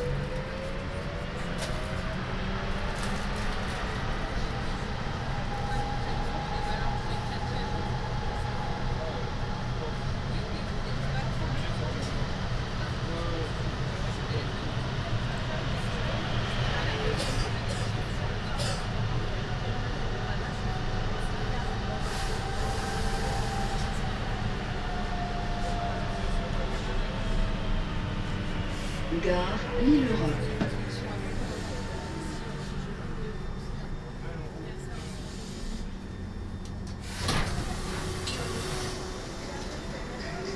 Lille-Centre, Lille, Frankrijk - Metro trip Lille
I chose Gare Lille Flandres as the location of this recording because the biggest opart was recorded there. But to be precise, it a trip from (Metro 1) Rihour to Gare Lille Flandres and (Metro 2) from Gare Lille Flandres to Gare Lille Europe.
Lille, France, 21 August 2016, 16:30